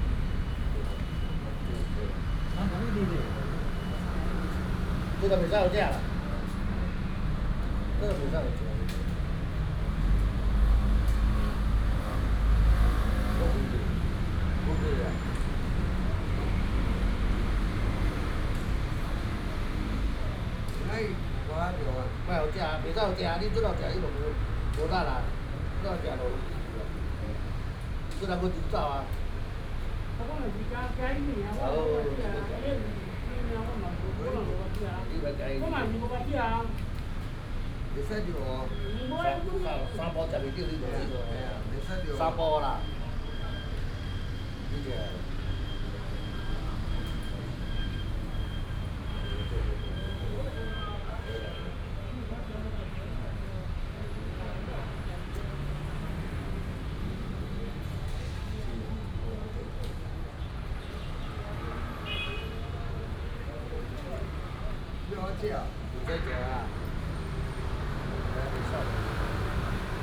四維公園, 板橋區, New Taipei City - in the Park
in the Park, Some old people are playing chess, Traffic Sound
29 July 2015, 15:37